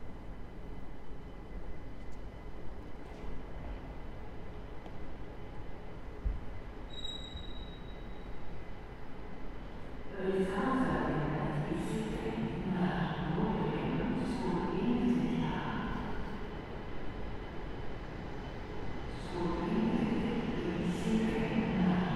Borgerhout, Antwerp, Belgium - Track 23 Antwerp Central Train Station 20170223
Zoom H4n Quadrophonic, stereo onboard XY (front) + 2X external NT5 microphones (rear). Tascam DR-100 stereo onboard AB. Walking around track 23, within the bowels of the Antwerp Central train station.